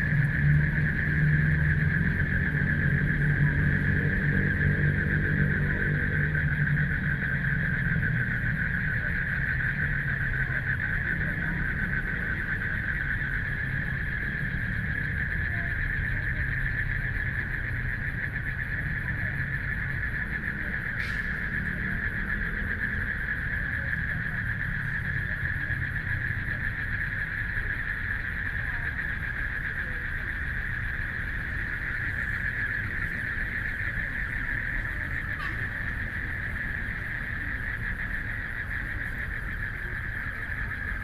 碧湖公園, Taipei City - In the park
In the park, People walking and running, Traffic Sound, Frogs sound
Binaural recordings
Neihu District, Taipei City, Taiwan, 19 March 2014, 19:23